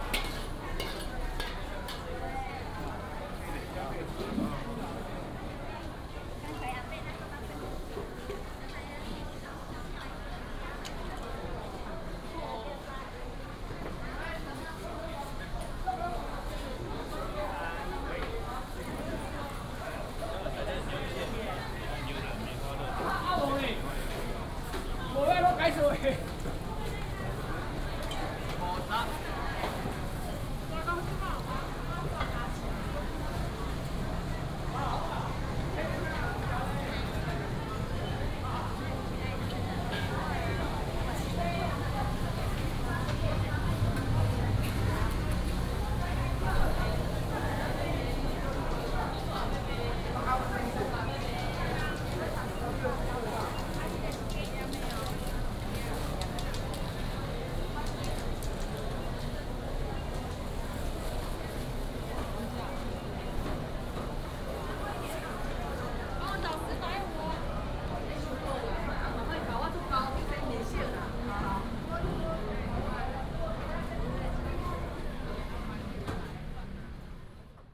虎尾黃昏市場, Huwei Township - Sunset Market
Walking in the market, Sunset Market
Yunlin County, Taiwan, 3 March 2017, 16:33